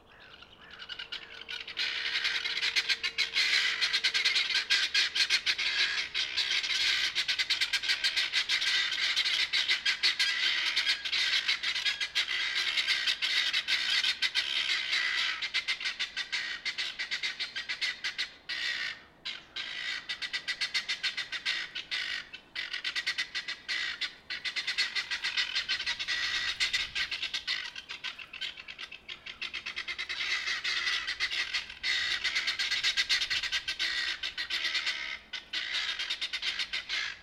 Stourpaine, Dorset, UK - Guinea Fowl roosting for the night
Recorded on a Fostex FR-2LE Field Memory Recorder using a Audio Technica AT815ST and Rycote Softie.
Blandford Forum, Dorset, UK